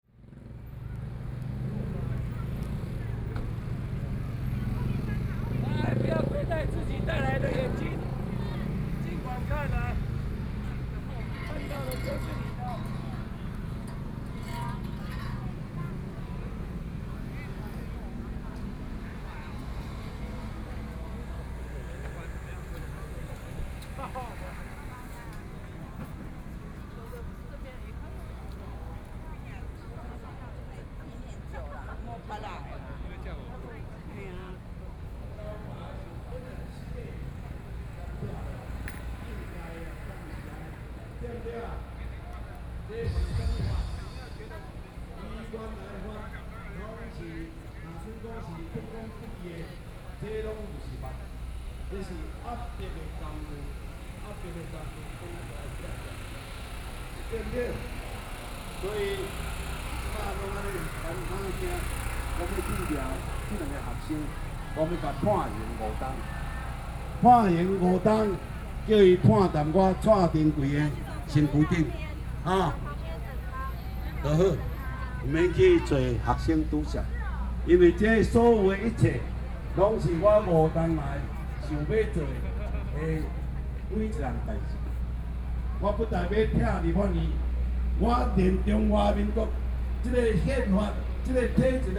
Taipei, Taiwan - protest
Walking through the site in protest, People and students occupied the Legislature